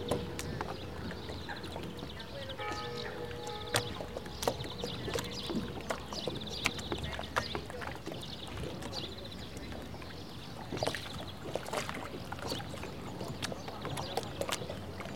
Lake of Piediluco, Umbria, Lapping and Bells
lapping, bells and fishing boat